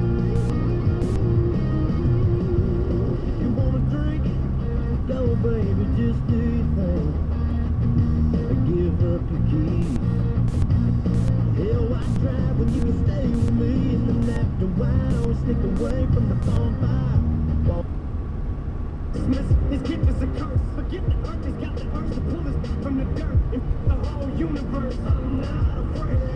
neoscenes: radio scan on the road
AZ, USA, 26 December